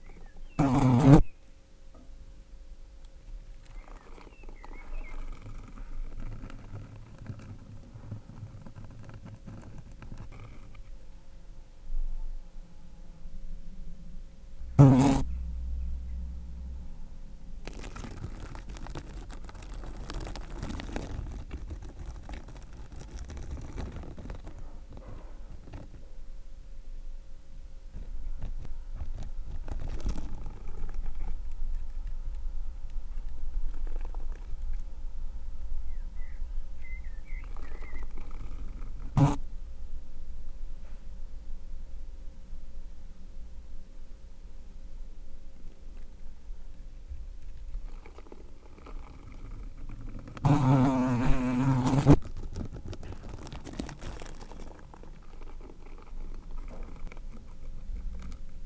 In the collection of the all-animals eating, here is the fly. It was very complicate to elaborate a strategy to record this kind of insect, fierce and moving. I disposed a very attractive carrion, a too old dry cat food. It was disgusting. Above, I put a transparent plastic box with a big hole done on purpose. I sticked a recorder exacly above the carrion (poor recorder !), with no more than 5 millimeters free, and I let the fly go on. The 5 millimeters free space is inteded to force the fly to walk on the recorder as the outside of the carrion was enveloped in a plastic film, the free space to lick was the recorder side. A moment, two flies are interested but the second one is distant. You can here the first insect fly over, it licks and immedialy, feel insecure. It walks again to carrion, licks, walk, fly... This is a fly life...
5 June 2016, 14:25